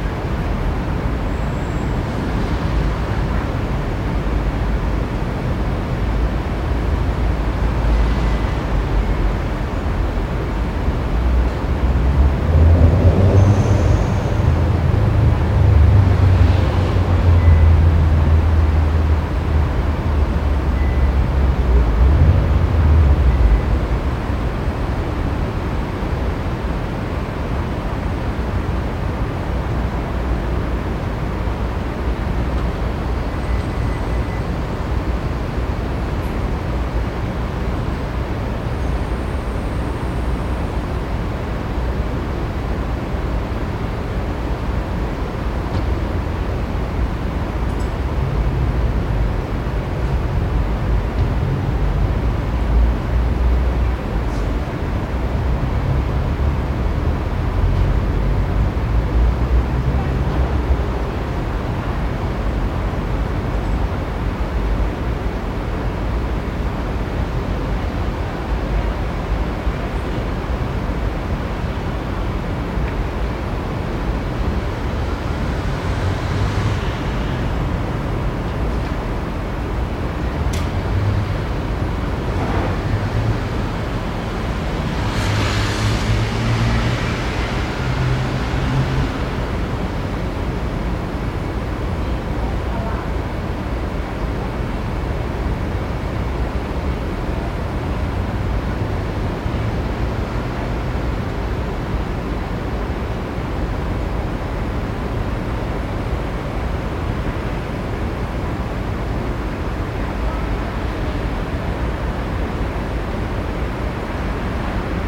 Rua Taguá - Night
Night in São Paulo in one business day. #brasil #SAOPAULO #CENTRO #Hospitais #BRAZIL